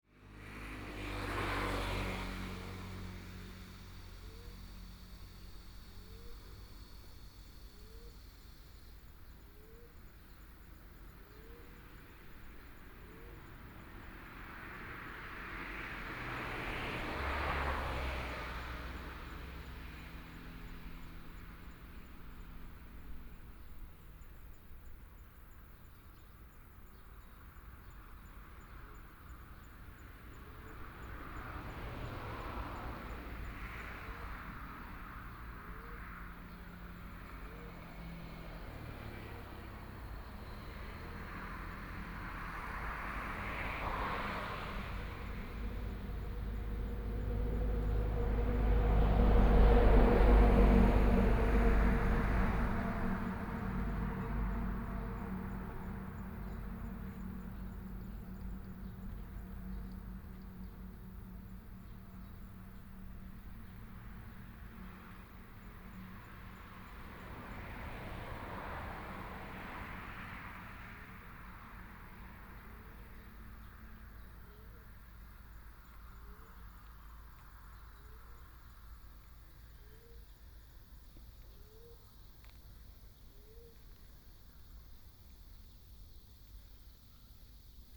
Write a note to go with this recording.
Next to the temple, Birdsong sound, Small village, Traffic Sound, Sony PCM D50+ Soundman OKM II